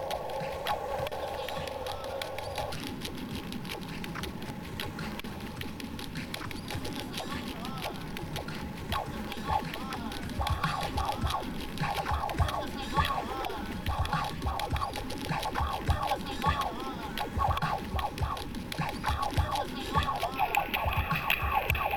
Constructed from ambience recorded on the Charlevoix bridge over the canal east of the Atwater market. Car tires against the textured metal surface of the bridge produce this distinctive thrum, which are looped to enhance the existing rhythms of traffic. It was a cold dry day, with ice underfoot on the empty cycle path up to the bridge.
Montreal, QC, Canada